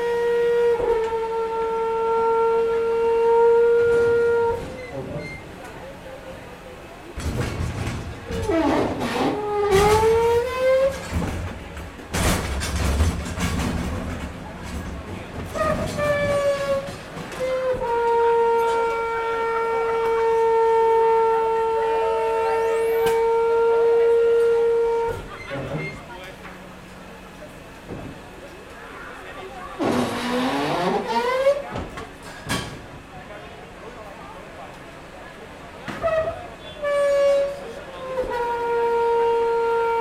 MUSICAL DUMPSTER Lisboa, Portugal - MUSICAL DUMPSTER
Garbage dumpster, with very musical tones, changing and collecting garbage with a mechanical arm. People talking, and a warm applause at the end, almost like a sound performance. Recorded with a Zoom H5, internal mics (XY stereo config).
Grande Lisboa, Área Metropolitana de Lisboa, Portugal, 11 September 2020, 23:33